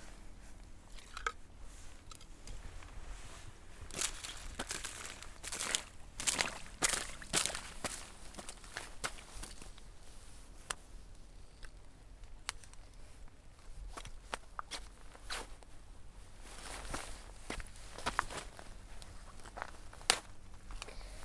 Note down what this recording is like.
Playing in the semi frozen mud with Mini-Recordist.